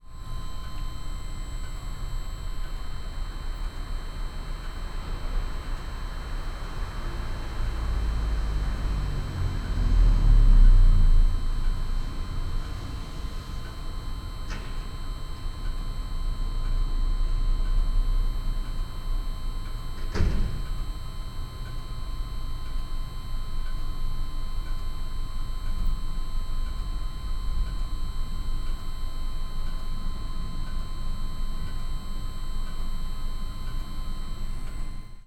Via Bellosguardo, Trieste, Italy - mashines time
9 September, 00:19